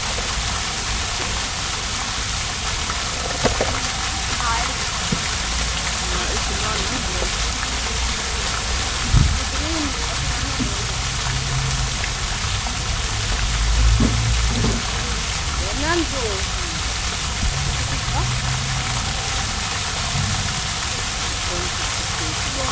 Bergisch Gladbach, Konrad-Adenauer-Platz, Brunnen
Brunnen, Geplätscher, Bergisch Gladbach, Konrad-Adenauer-Platz